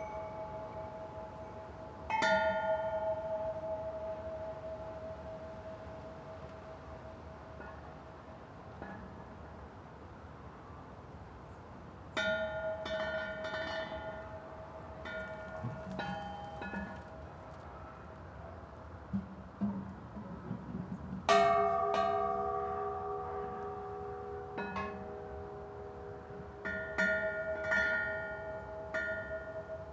Giant Windchime Array on Danube Island
6 giant windchimes, driven by 10 meter high finned poles. Located on the new island Donauinsel formed by dredging the New Danube channel, for flood control.
Vienna, Austria